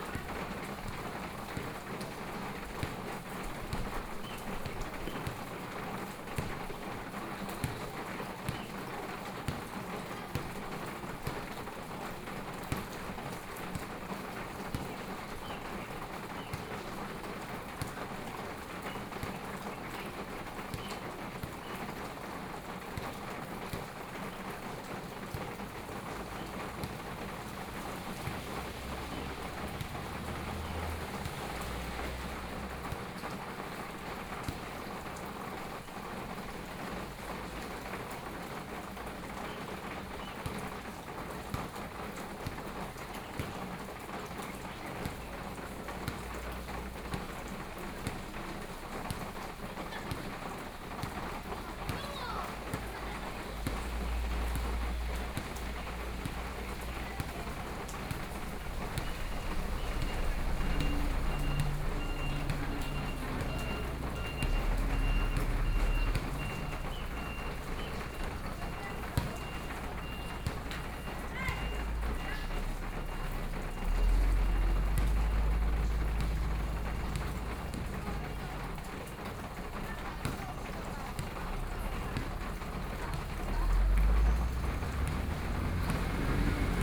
憲明國小, Sanxing Township - Rainy Day
Rainy Day, Thunderstorm, Small village, Traffic Sound, Play basketball, Birdsong, At the roadside
Sony PCM D50+ Soundman OKM II
Yilan County, Taiwan